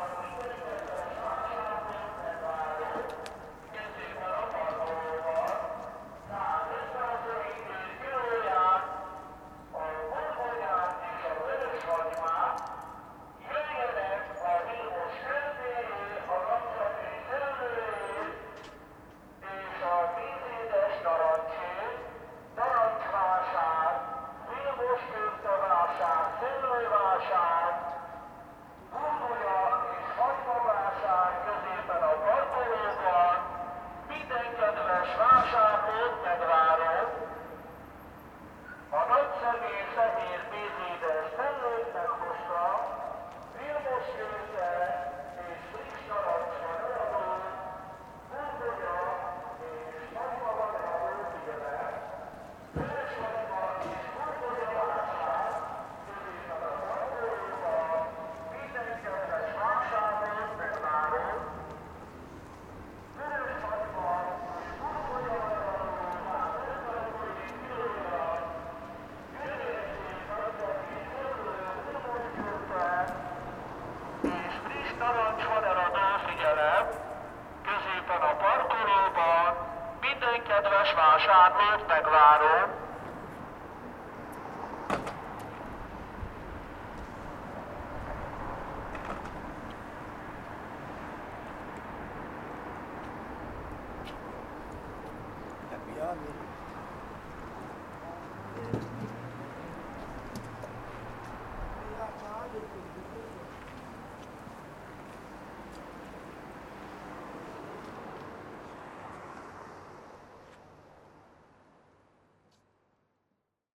Sounds of unknown professions, mobile megaphone amplified fruit vendour in Budapest
A little red car packed with fruit and vegetables arrives at the foot of a monolithic soviet era residence building. Two men get out, one prepares to display the goods, the other walks up and down advertising what is on sale with the help of a megophone, making his voice be carried to the upmost storeys.